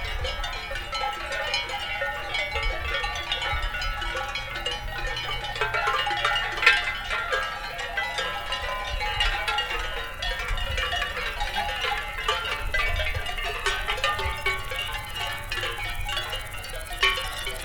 Parc National des Pyrénées, France - Cowbells in the Pyrenees
Arrens-Marsous, France